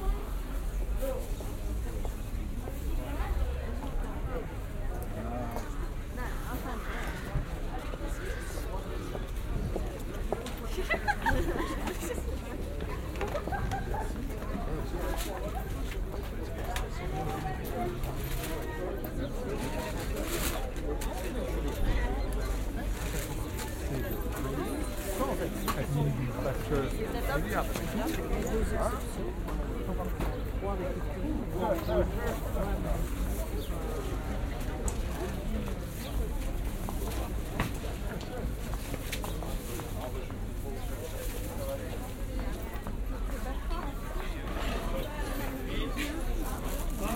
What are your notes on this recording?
Walk on the Market next to Grande Notre Dame, first outdoor, than indoor, binaural recording.